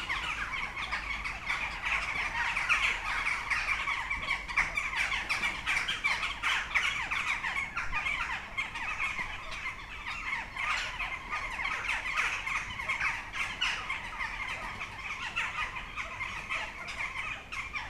Utena, Lithuania, urban crows chorus